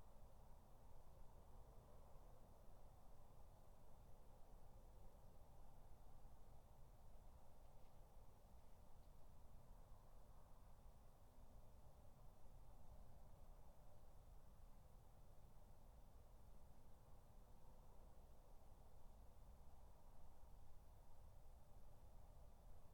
Dorridge, West Midlands, UK - Garden 1
These recordings were made as part of my final project for my MA Music course at Oxford Brookes. For the project I recorded my back garden in Dorridge for 3 Minutes, every hour for 24 hours. I then used the audio as the basis for a study into the variety of sounds found in my garden. The results of the audio were also pieced together in chronological order to create a sound collage, telling the story of a day in the life of my back garden.